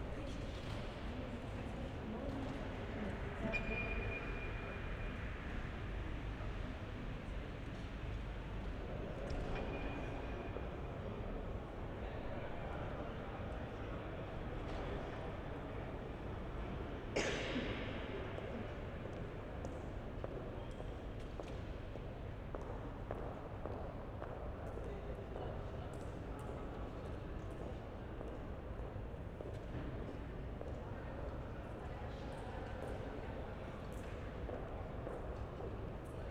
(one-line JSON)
{"title": "berlin, friedrichstr., kontorenhaus - entry hall", "date": "2010-12-23 12:40:00", "description": "a few meters away from the previous position, sides changed", "latitude": "52.51", "longitude": "13.39", "altitude": "45", "timezone": "Europe/Berlin"}